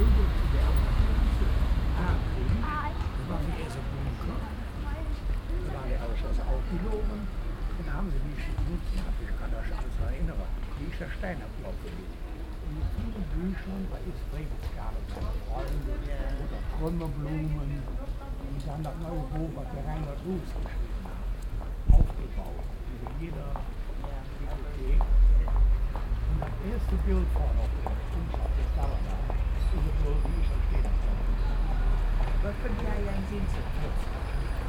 cologne, an der alten eiche, passanten
aufnahme an einem samstag mittag - gespräch zweier passanten
project: social ambiences/ listen to the people - in & outdoor nearfield recordings
altstadt sued, an der alten eiche